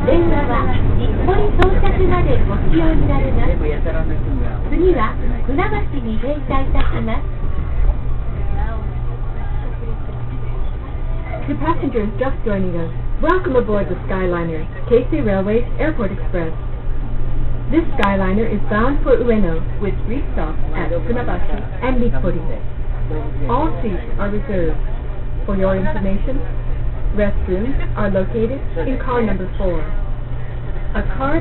{"title": "keisei skyline announcement 19.12.07 2 pm", "latitude": "35.77", "longitude": "140.35", "altitude": "37", "timezone": "GMT+1"}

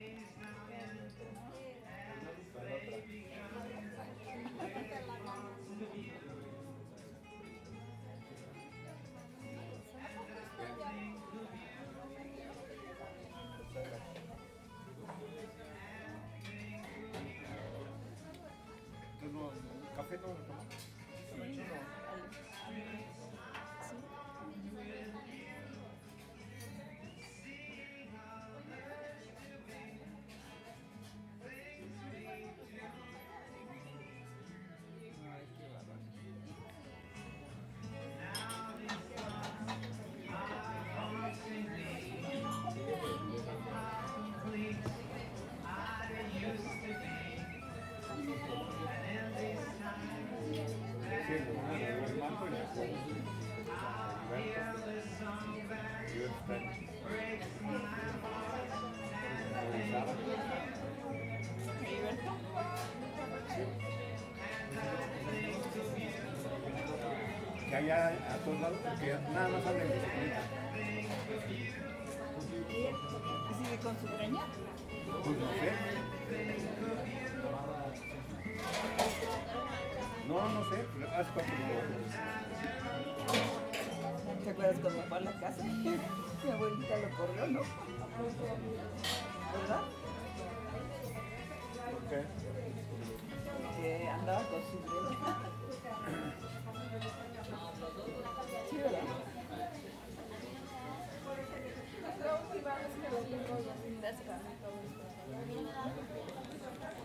{"title": "San Miguel Chapultepec, Mexico City, Federal District, Mexico - Rainy afternoon", "date": "2015-06-13 19:00:00", "description": "A green spot in a crazy city! Great pizza, amazing music: I think of you. Sugar Man.", "latitude": "19.41", "longitude": "-99.19", "altitude": "2256", "timezone": "America/Mexico_City"}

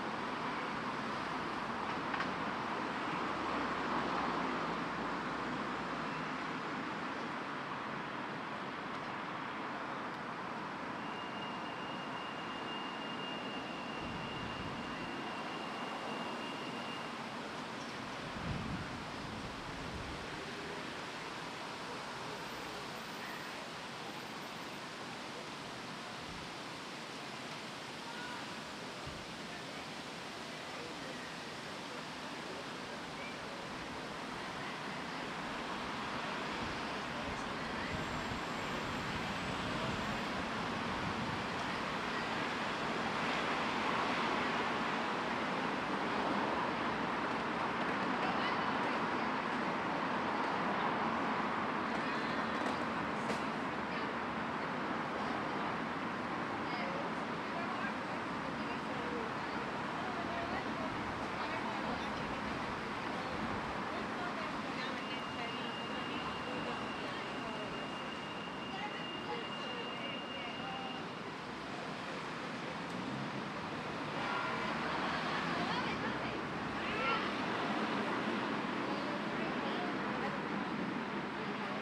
{"title": "Church Bells in Tribute to the Death of Her Late Majesty Queen Elizabeth II", "date": "2022-09-09 11:47:00", "description": "On Friday, September 9, 2022, at 12:00 pm, various church bells across the UK sounded off in tribute to the death of Her Late Majesty Queen Elizabeth ll, following her passing on September 8th, 2022.\nThe recording took place on the front lawn of the Lanyon Building, the main building of Queen’s University Belfast, which also brought its main flag down to half-mast position. Sounds of daily life can be heard, ranging from cyclists, pedestrians, motor and emergency vehicles, birds, pedestrian crossings, and other local sounds in the area.\nThe Church Bells were subtle and found gaps in the environmental soundscape to emerge and be heard. Each varied in duration, loudness, and placement in the listening experience. The bells mark a time of respect, change, and remembrance after a 70-year reign from the late Queen.", "latitude": "54.58", "longitude": "-5.94", "altitude": "17", "timezone": "Europe/London"}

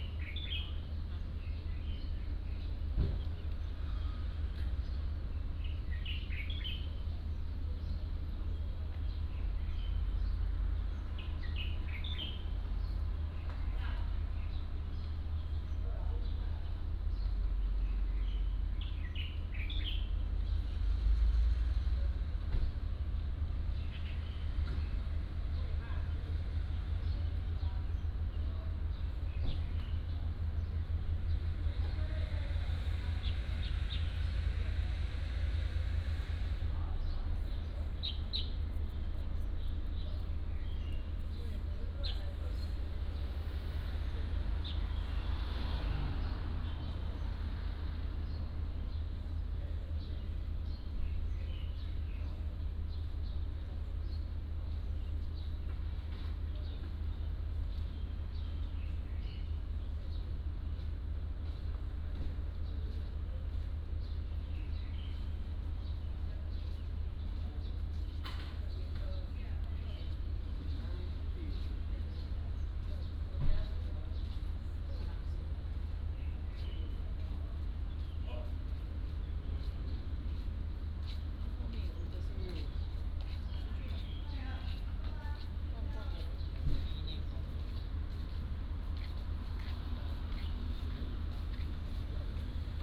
{"title": "Taitung Station, Taiwan - Outside the station", "date": "2014-10-31 15:54:00", "description": "Outside the station, Birds singing", "latitude": "22.79", "longitude": "121.12", "altitude": "45", "timezone": "Asia/Taipei"}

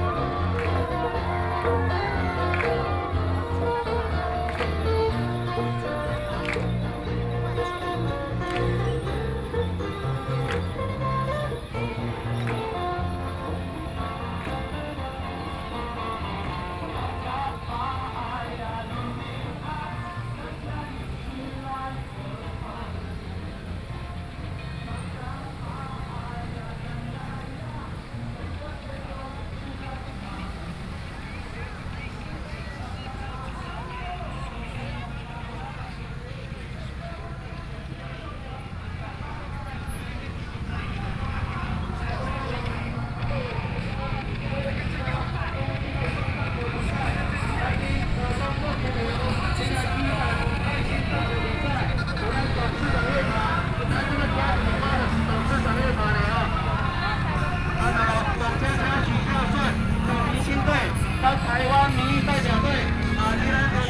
{"title": "Chongsheng St., Yilan City - Festival", "date": "2014-07-26 19:59:00", "description": "Festival, Traffic Sound, At the roadside\nSony PCM D50+ Soundman OKM II", "latitude": "24.76", "longitude": "121.76", "altitude": "18", "timezone": "Asia/Taipei"}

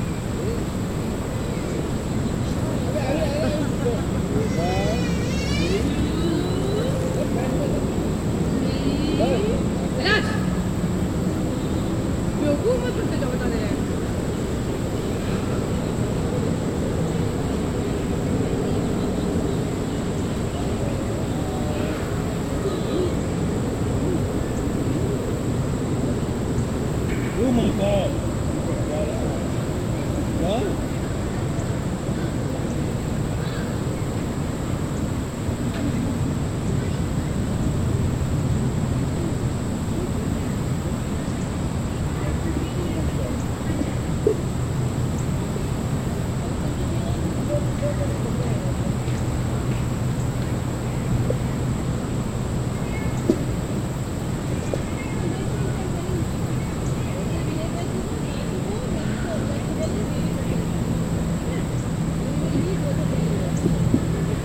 {"title": "Rottenwood Creek Trail, Atlanta, GA, USA - Busy River Park", "date": "2020-09-13 15:36:00", "description": "A recording of a busy trailhead taken in the middle of a patch of grass. Some people were camped out on the greenspace and others were walking. A few people passed the recording rig by foot and the sound of vehicles driving in the background is prominent. The insects were particularly active today. A child ran up to the recorder right before the fade.\nRecorded with the Tascam DR-100 mkiii. Some minor eq was done in post.", "latitude": "33.87", "longitude": "-84.45", "altitude": "242", "timezone": "America/New_York"}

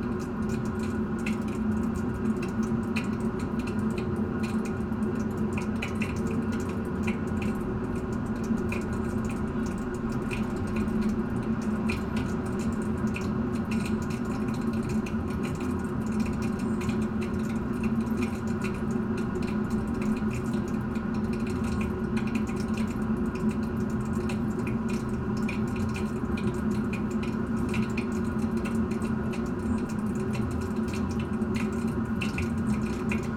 {"title": "Krzywy Las, Poland - Pipe / Crooked Forest", "date": "2016-07-20 16:22:00", "description": "Pipe from municpal heating system in the Crooked Forest between Gryfino and Szczecin", "latitude": "53.21", "longitude": "14.48", "altitude": "5", "timezone": "Europe/Warsaw"}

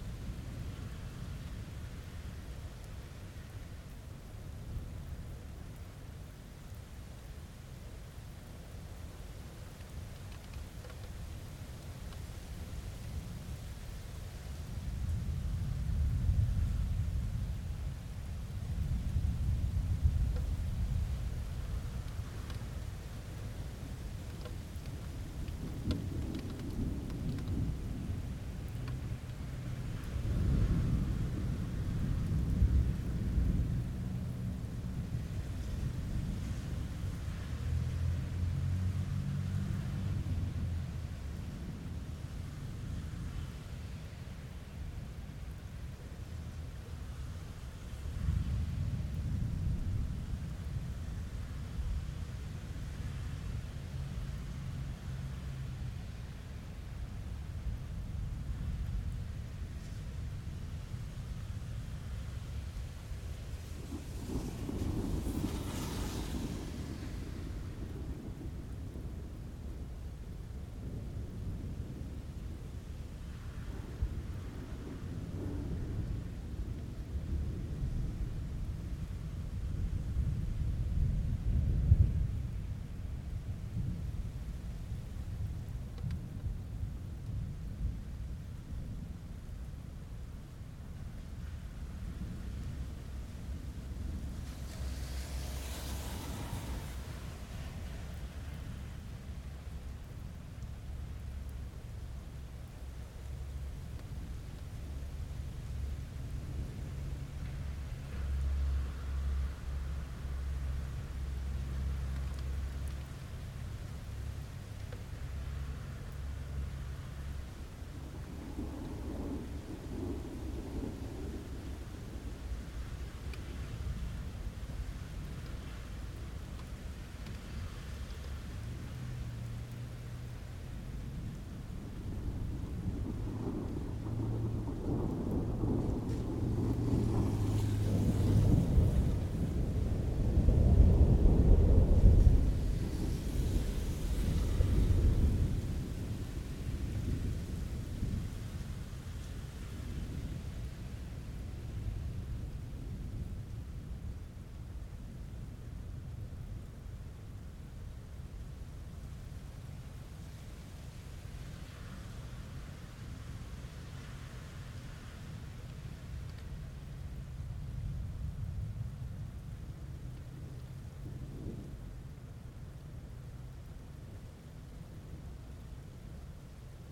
{"title": "Portage Park, Chicago, IL, USA - Late evening thunderstorm in Jefferson Park, Chicago", "date": "2012-07-18 22:00:00", "description": "A thunderstorm passes over the Jefferson Park neighborhood of Chicago, Illinois, USA, on World Listening Day 2012.\n2 x Audio Technica AT3031, Sound Devices 302, Tascam DR-40.", "latitude": "41.97", "longitude": "-87.77", "altitude": "188", "timezone": "America/Chicago"}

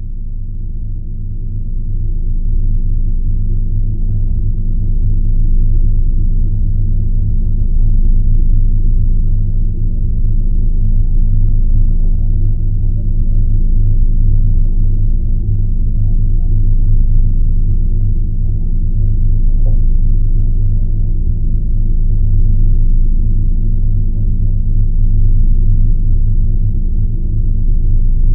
{"title": "Birštonas, Lithuania, inside mineral water evaporation tower - pump work", "date": "2022-06-18 18:50:00", "description": "Mineral water evaporation tower. Geophone on a wall - pump drone.", "latitude": "54.61", "longitude": "24.03", "altitude": "65", "timezone": "Europe/Vilnius"}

